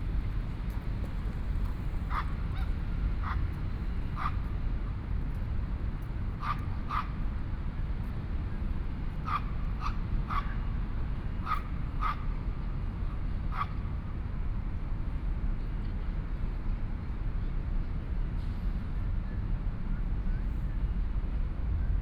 林森公園, Taipei City - Night park
Pedestrian, Traffic Sound, Dogs barking, Traffic Sound, Environmental sounds
Please turn up the volume a little
Binaural recordings, Sony PCM D100 + Soundman OKM II
Taipei City, Taiwan, February 2014